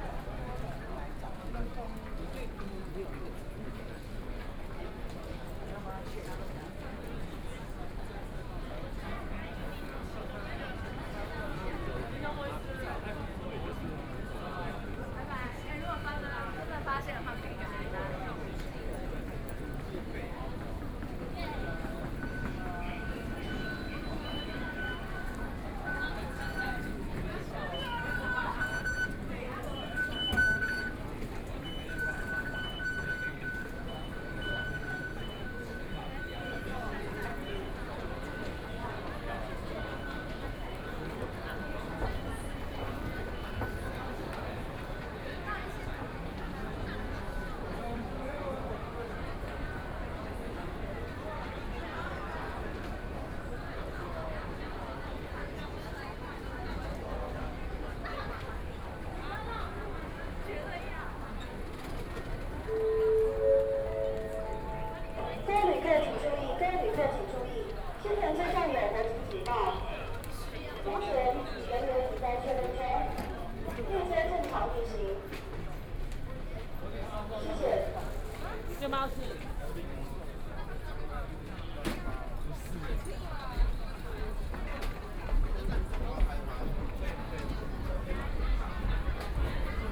Walking out of the station, Binaural recordings, Sony PCM D100 + Soundman OKM II